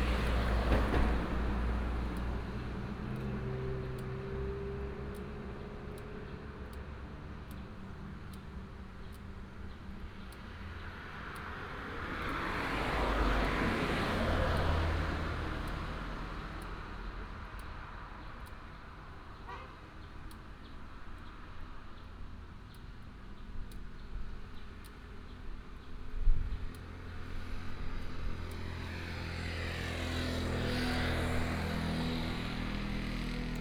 田洋村, Minsheng Rd., Baozhong Township - Small village
Traffic sound, Taiwan's famous late singer's hometown(Teng Li-Chun)